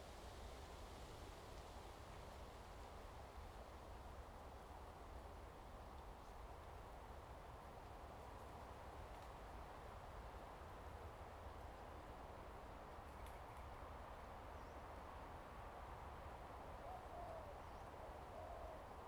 Lieyu Township, Kinmen County - In the woods
In the woods, the wind, Birds singing
Zoom H2n MS +XY